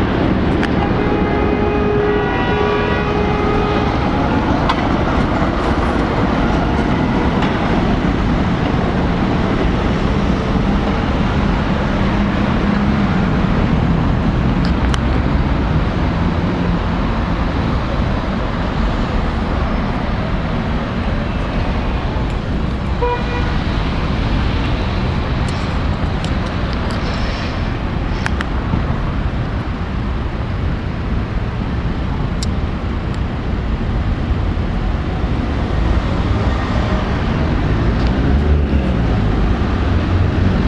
[IIIV+tdr] - Vukov spomenik, plato
Serbia, 29 October 2011, 17:31